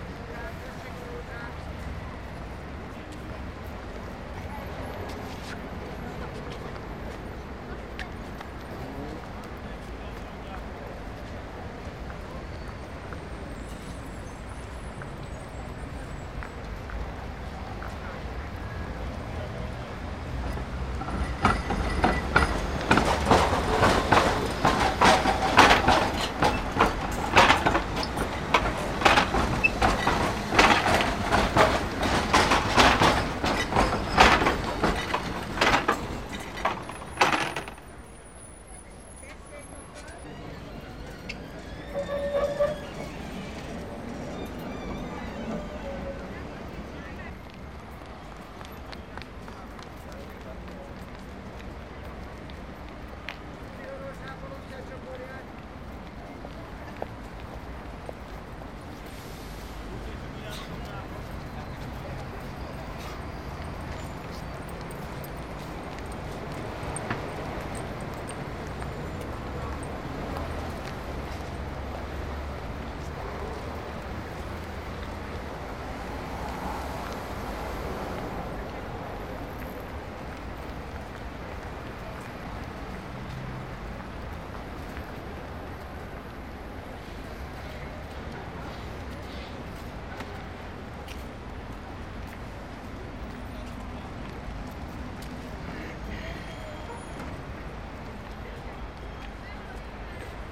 heavy car traffic and trams plus footsteps
international city scapes and social ambiences
Magyarország, European Union